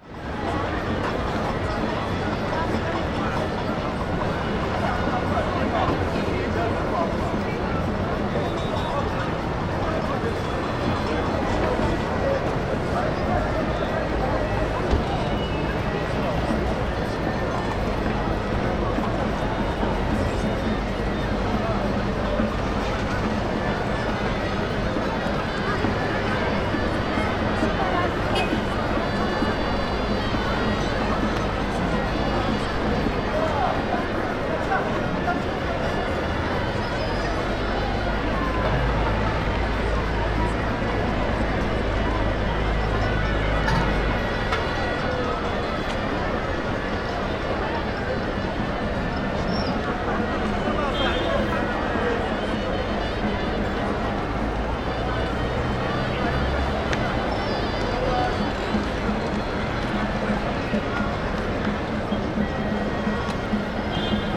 Recorded from the terrace of the Cafe de France, high above the Jemaa el Fna, the sounds of the square are almost pleasantly relaxing.
Recorded with Sony PCM-D100 with built-in microphones